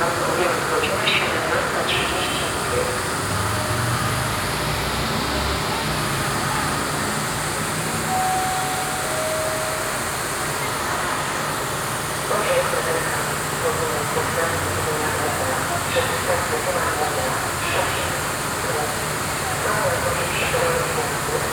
Poznan, main train station, platform - between two idling trains
binaural rec. walking around the platform before getting on the train. station announcements. passengers arriving at the platform. swooshes and clatter of the idling trains on both tracks.
Poznan, Poland